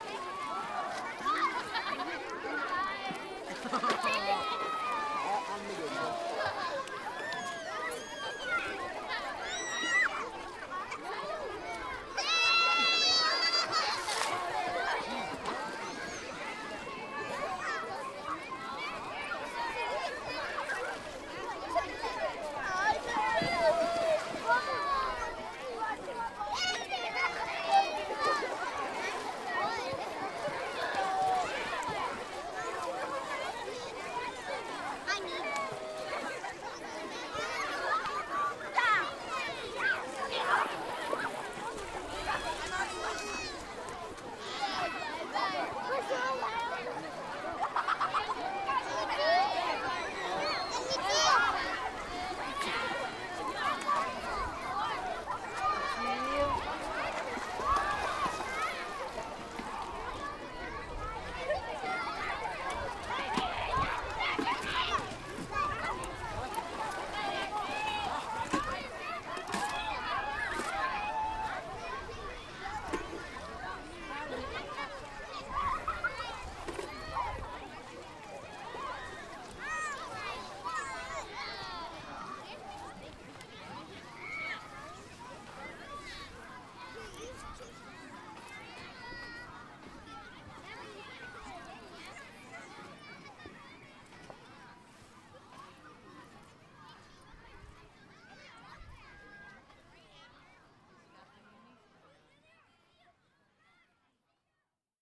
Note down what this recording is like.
A sweltering mid Summer afternoon at the beach. This recording was made while I simply walked the length of the beach, cutting through the middle of the crowd. On the left you will hear children near the beach's edge and those splashing around in the shallow water. On the right are even more crowds of children, creating a claustrophobic atmosphere with one single recording. Tascam DR-07, no real edits.